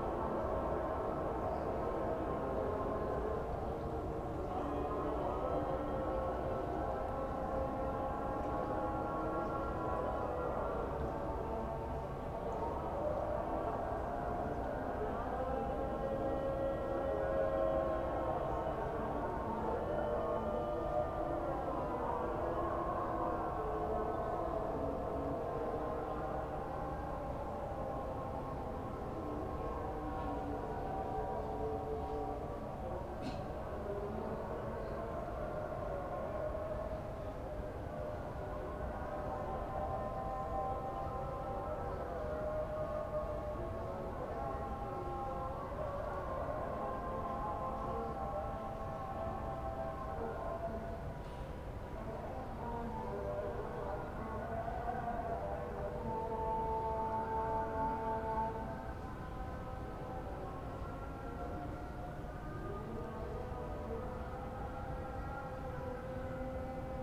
chants from very distant prayers early in the morning, recorded with Sony PCM-D100 with built-in microphones
Morocco, 25 November, 05:28